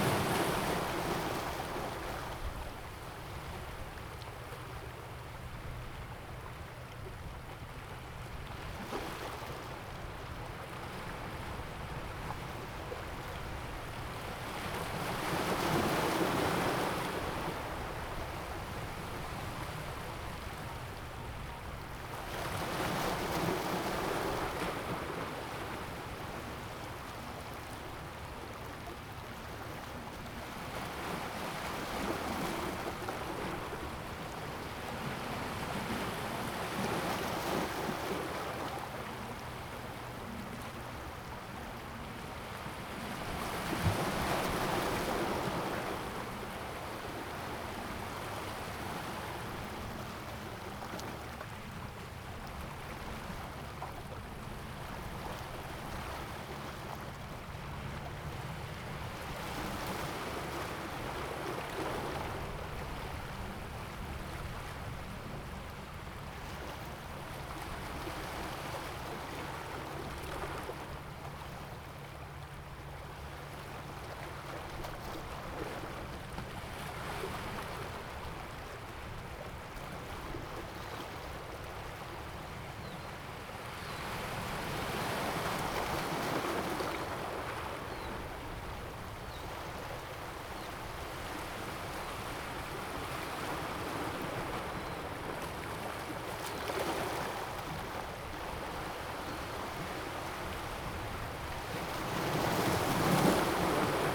Xikou, Tamsui Dist., 新北市 - On the coast
On the coast, Sound of the waves
Zoom H2n MS+XY
New Taipei City, Tamsui District, November 2016